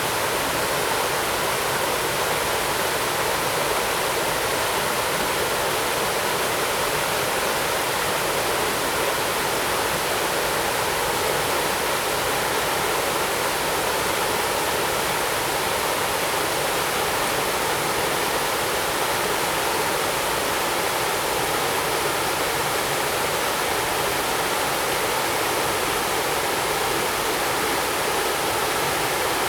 得子口溪, Jiaoxi Township - Stream sound
Stream sound
Zoom H2n MS+ XY